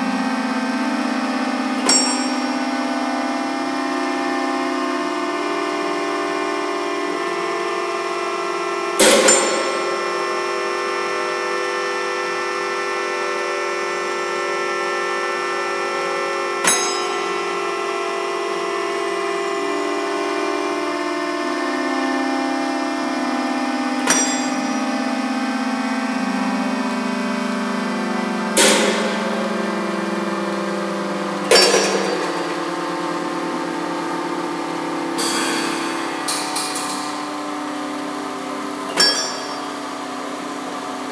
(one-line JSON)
{"title": "Museumsplatz, Wien, Austria - Jean Tinguelys Méta-Harmonie Sculpture at MUMOK [Recorded Nov, 2013]", "date": "2013-11-19 15:47:00", "description": "Audio recording of Jean Tinguely's sound sculptrure Méta-Harmonie (Build 1978) - located in the usbelevel of the MUMOK museum in Vienna, AU.\nMéta-Harmonie is a three-part machine-sculpture build of various found objects, 3 electric motors, 236.22 inch x 114.17 inch x 59.06 inch.\nRecorded using Zoom H2n handheld recorder, placed in the middle of the sculpture. Recorded in surround mode and later matched to stereo recording.", "latitude": "48.20", "longitude": "16.36", "altitude": "184", "timezone": "Europe/Vienna"}